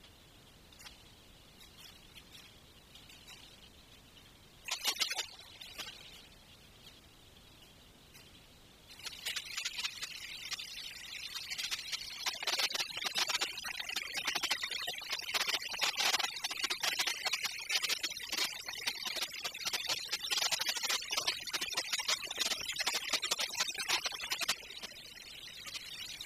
Palast der Republik demolition
Workers cut concrete floor into sections.
Berlin, Germany